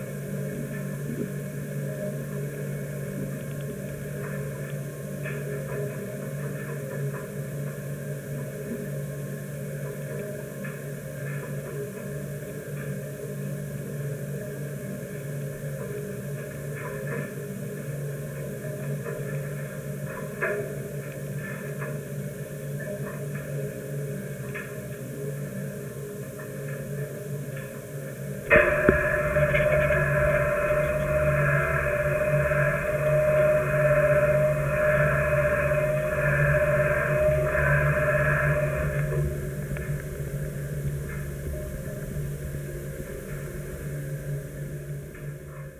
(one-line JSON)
{"title": "Grevenbroich, Germany - Green Rotation: the mechanics and musicality of a wind generator", "date": "2012-11-02 16:13:00", "description": "Recorded with a contact microphone this is the sound inside the metal tower of the wind generator. The wind is strong and the propeller at the top turns quite fast.", "latitude": "51.06", "longitude": "6.61", "altitude": "156", "timezone": "Europe/Berlin"}